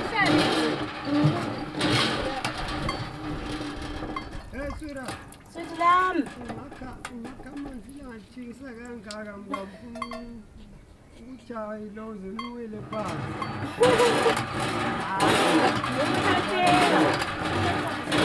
Lupane, Zimbabwe - Borehole encounters…
Men are passing the borehole too; hanging on for a little chat…. I don’t make any further recordings on our way back to Thembi’s homestead since a heavy metal bucket full of water needs to be balanced on my head… My deep admiration to all those many women who do this work day after day for their families… and with much love and laughter in the face of heavy work or adversity…!
Thembi Ngwabi is a dancer, actress and former bass guitarist with the all-women-band “Amakhosigasi”, she is heading the Amakhosi Performing Arts Academy APAA.
The Interview with Thembi Ngwabi from 29 Oct. 2012 can be found at :